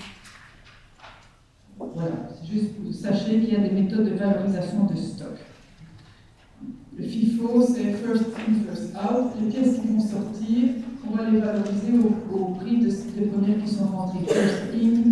Ottignies-Louvain-la-Neuve, Belgique - A course of accounting
A course of accounting in the Agora auditoire.